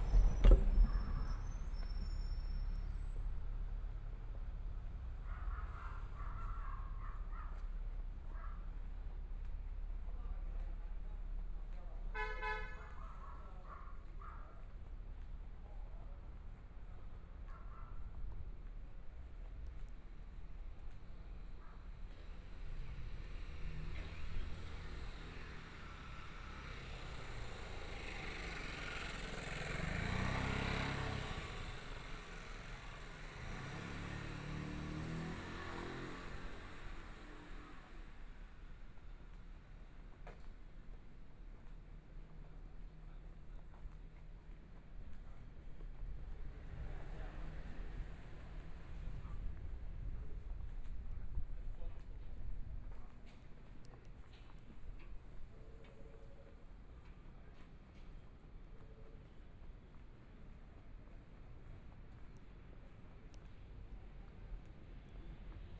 Zhongshan District, Taipei City - Walking through the small streets
Walking through the small streets, Environmental sounds, Motorcycle sound, Traffic Sound, Binaural recordings, Zoom H4n+ Soundman OKM II
Taipei City, Taiwan, February 2014